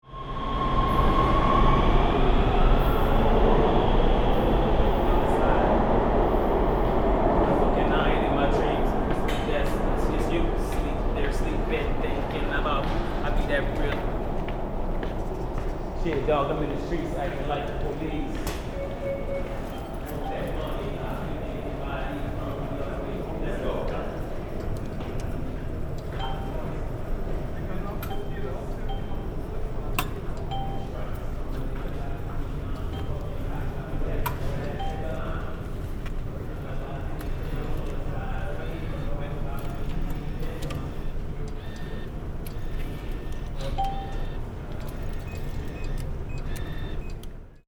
Hollywood/Vine Metro station, freestyler
Hollywood/Vine, Metro subway train station, freestyle rapper, train departing.